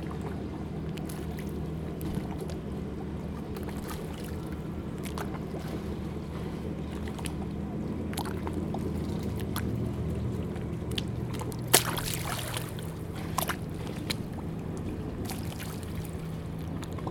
Talloires, France - boats on the lake